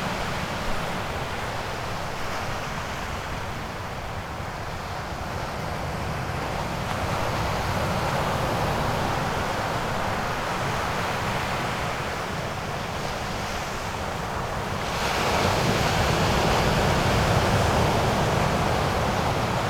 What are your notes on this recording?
waves pulsing at the rocky beach of Porto da Cruz.